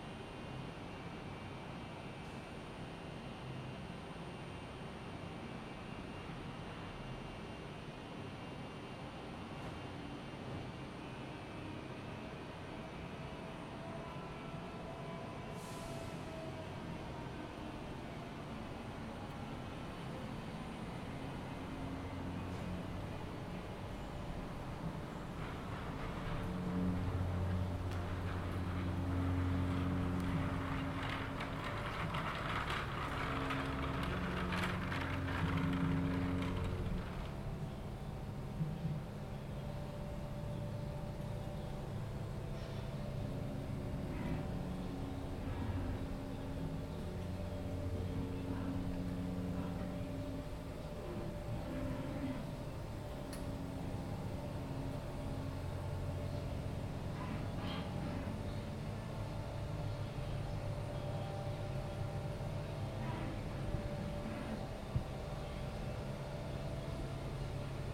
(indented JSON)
{
  "title": "Belfast, Belfast, Reino Unido - Soundwalk in a machine room",
  "date": "2013-11-20 14:47:00",
  "description": "An operating and yet quite human-emptied stokehold functioning in the basements of the engineering building at Queen's University",
  "latitude": "54.58",
  "longitude": "-5.94",
  "altitude": "23",
  "timezone": "Europe/London"
}